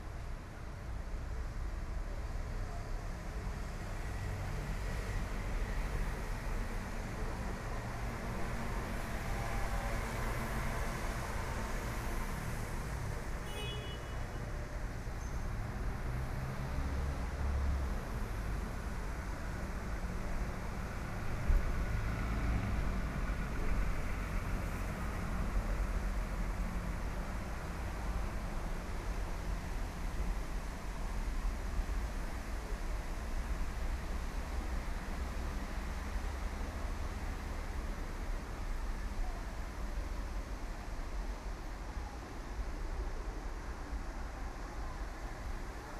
Via Roma, Palermo, Italia (latitude: 38.11844 longitude: 13.36267)
via Roma con la pioggia 21/01/2010
SIC, Italia